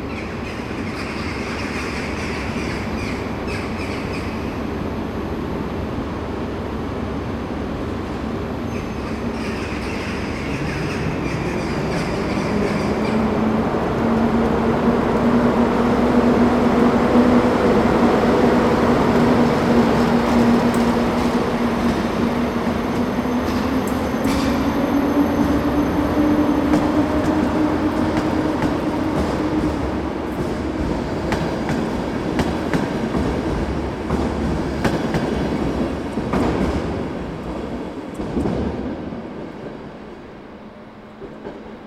Legnica, Polska - birds vs train
Legnica, Poland, 10 October 2014